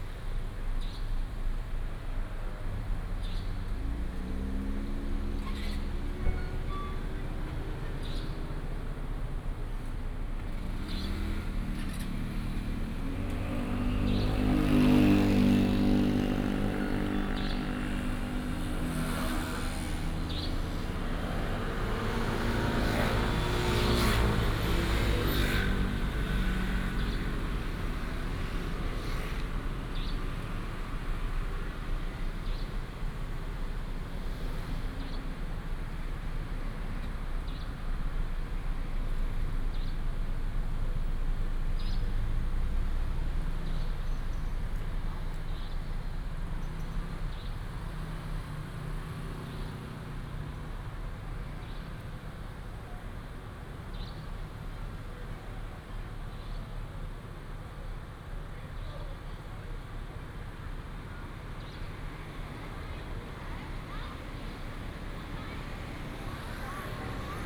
{
  "title": "Wenhua S. Rd., Puzi City, Chiayi County - At the intersection",
  "date": "2018-05-07 20:28:00",
  "description": "At the intersection, Convenience store, Bird call, Traffic sound\nBinaural recordings, Sony PCM D100+ Soundman OKM II",
  "latitude": "23.46",
  "longitude": "120.24",
  "altitude": "10",
  "timezone": "Asia/Taipei"
}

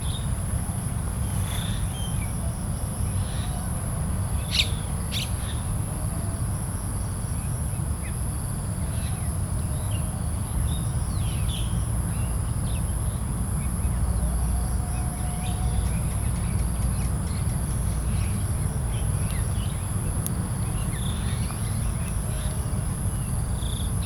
{
  "title": "Jalan Puteri Hang Li Poh, Kampung Bukit China, Melaka, Malaysia - Evening in Bukit Cina",
  "date": "2017-10-13 18:53:00",
  "description": "The recording is set in an old cemetery and the recorder is facing the many trees while the birds is making sounds. Lots of mosquitoes.",
  "latitude": "2.20",
  "longitude": "102.26",
  "altitude": "25",
  "timezone": "Asia/Kuala_Lumpur"
}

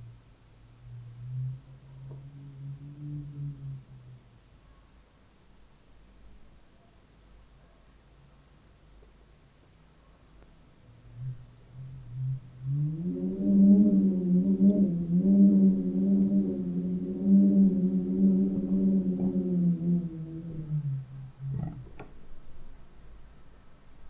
{
  "title": "Mateckiego street, corridor - wind through sliding door slit",
  "date": "2019-05-14 11:00:00",
  "description": "wind gushing through a small slit. rumble of a window in the room. some sound of construction nearby. (roland r-07)",
  "latitude": "52.46",
  "longitude": "16.90",
  "altitude": "100",
  "timezone": "Europe/Warsaw"
}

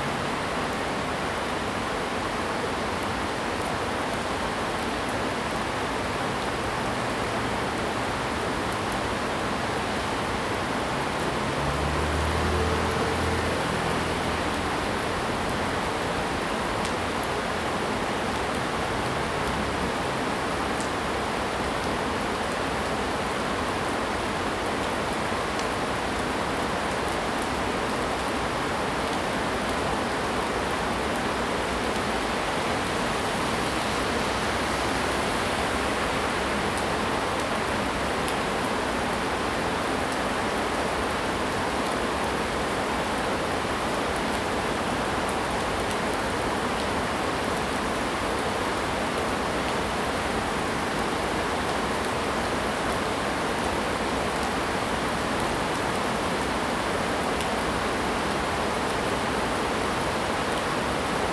{
  "title": "綠動奇蹟, 桃米里 Puli Township - Heavy rain",
  "date": "2015-08-26 17:25:00",
  "description": "Heavy rain, Traffic Sound\nZoom H2n MS+XY",
  "latitude": "23.94",
  "longitude": "120.93",
  "altitude": "463",
  "timezone": "Asia/Taipei"
}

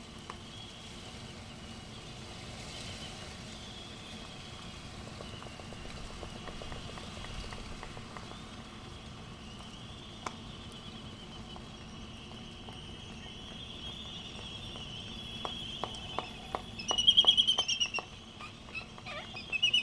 {
  "title": "United States Minor Outlying Islands - Laysan albatross dancing ...",
  "date": "1997-12-25 10:45:00",
  "description": "Sand Island ... Midway Atoll ... laysan albatross clappering bills more than anything else ... bird calls from black noddy ... Sony ECM 959 one point stereo mic to Sony Minidisk ... background noise ...",
  "latitude": "28.22",
  "longitude": "-177.38",
  "altitude": "9",
  "timezone": "Pacific/Midway"
}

